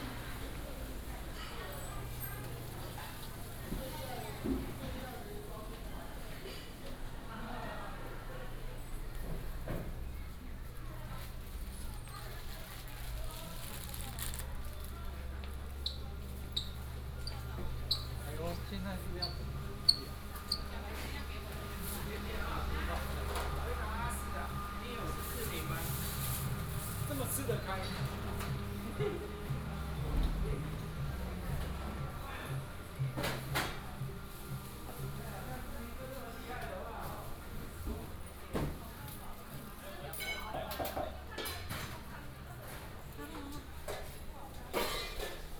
{
  "title": "仁義公有零售市場, Zhubei City - Walking through the market",
  "date": "2017-02-07 12:10:00",
  "description": "Walking through the market",
  "latitude": "24.83",
  "longitude": "121.01",
  "altitude": "32",
  "timezone": "GMT+1"
}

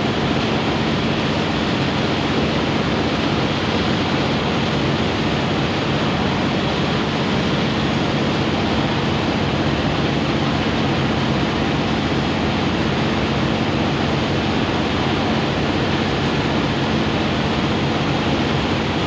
{
  "title": "Zuid, Rotterdam, Netherlands - Waterbus",
  "date": "2021-08-10 13:10:00",
  "description": "Recorded using Soundman binaural mics while traveling on the waterbus",
  "latitude": "51.91",
  "longitude": "4.51",
  "timezone": "Europe/Amsterdam"
}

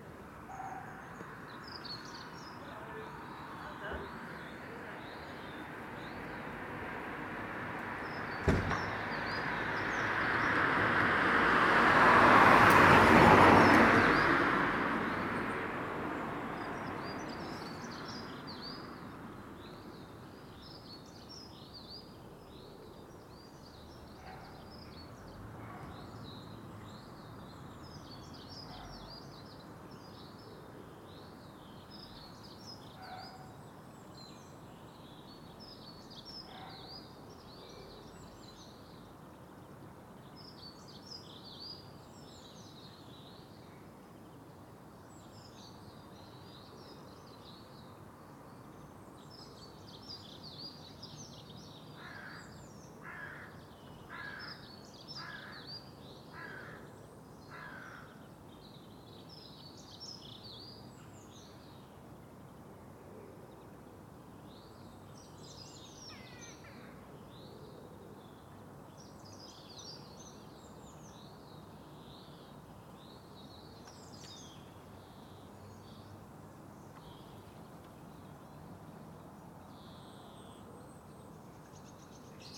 {
  "title": "Contención Island Day 61 inner west - Walking to the sounds of Contención Island Day 61 Saturday March 6th",
  "date": "2021-03-06 09:05:00",
  "description": "The Drive\nTwo runners\ntwo cars\none plane\nIn the sunshine\na blue tit calls\nand a nuthatch responds\nPushed by tree roots\nthe kerb stones\nbillow down the street",
  "latitude": "55.00",
  "longitude": "-1.62",
  "altitude": "72",
  "timezone": "Europe/London"
}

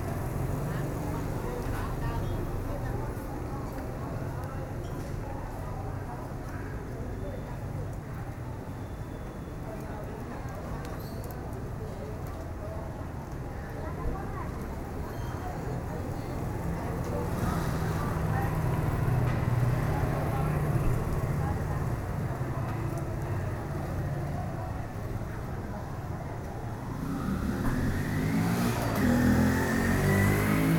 {
  "title": "Krala Haom Kong, St, Phnom Penh, Cambodia - Street No. 118",
  "date": "2008-10-08 11:50:00",
  "description": "At an intersection of street No. 118 with another street in a neighborhood in Phnom Penh, after a day of wandering (collecting images and recordings), we sit in creaky wicker chairs on the veranda of a corner cafe. The sun is going down; a white-robed monk comes in and passes the shopkeeper a slip of white paper, and then shuffles out.",
  "latitude": "11.57",
  "longitude": "104.93",
  "altitude": "22",
  "timezone": "GMT+1"
}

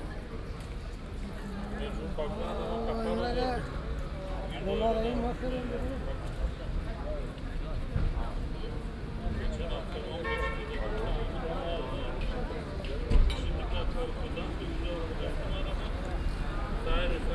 {
  "title": "Keupstr., Köln Mülheim - street ambience, walk",
  "date": "2013-07-18 19:55:00",
  "description": "short walk in Keupstr, i used to live here years ago, still visiting the same restaurant for its chicken soup.\n(Sony PCM D50, OKM2)",
  "latitude": "50.96",
  "longitude": "7.01",
  "altitude": "52",
  "timezone": "Europe/Berlin"
}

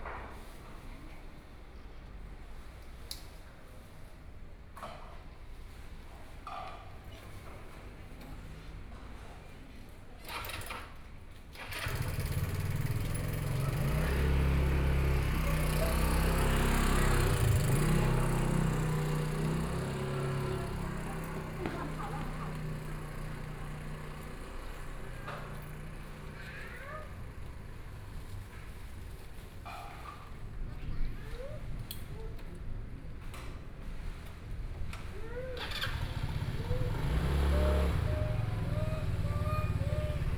{
  "title": "協天宮, Yuli Township - In the temple plaza",
  "date": "2014-09-07 16:14:00",
  "description": "In the temple plaza",
  "latitude": "23.33",
  "longitude": "121.32",
  "altitude": "136",
  "timezone": "Asia/Taipei"
}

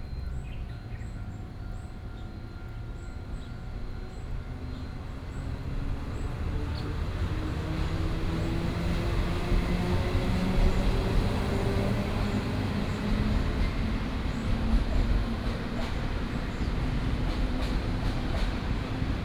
{
  "title": "Zuanxiang Rd., 頭城鎮城北里 - Next to the railway",
  "date": "2014-07-07 16:12:00",
  "description": "Next to the railway, Birdsong, Very hot weather, Traveling by train",
  "latitude": "24.86",
  "longitude": "121.82",
  "altitude": "12",
  "timezone": "Asia/Taipei"
}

